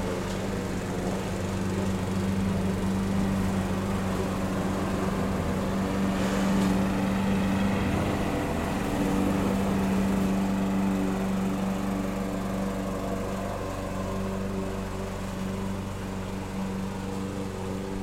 Eckernförder Str., Kronshagen, Deutschland - Power mower noise
Infernal noise of two power mower. Zoom H6 recorder, xy capsule
Kronshagen, Germany, 4 September 2017